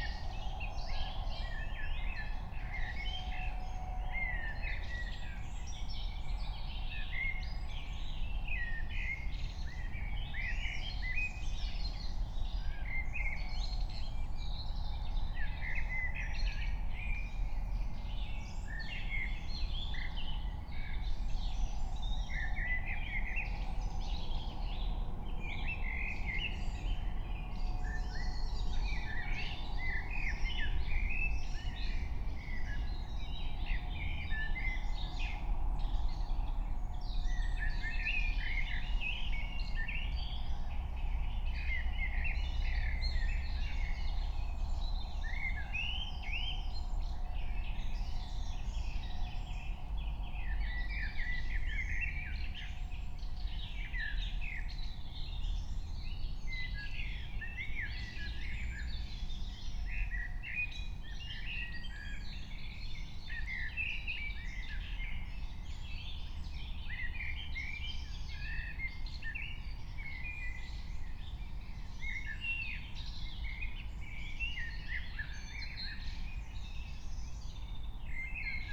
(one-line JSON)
{"date": "2021-07-05 04:00:00", "description": "04:00 Berlin, Königsheide, Teich - pond ambience", "latitude": "52.45", "longitude": "13.49", "altitude": "38", "timezone": "Europe/Berlin"}